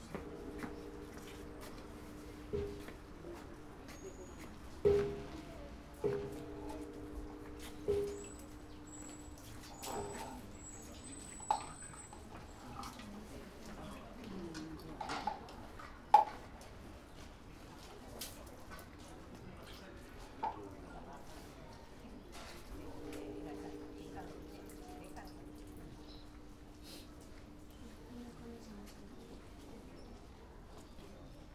shrine visitors throwing coins, ringing a bell and splashing a holly statue with water.